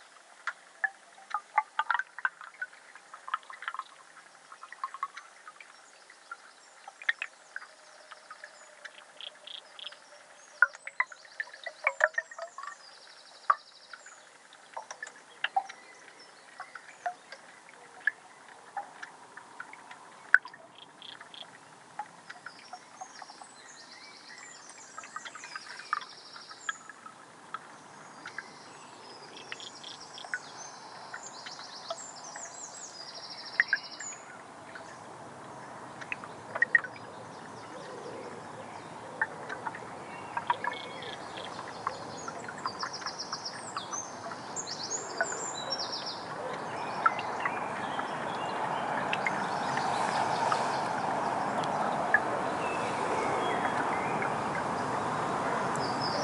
Cadder, Glasgow, UK - The Forth & Clyde Canal 002
3-channel live-mix with a stereo pair of DPA4060s and an Aquarian Audio H2a hydrophone. Recorded on a Sound Devices MixPre-3.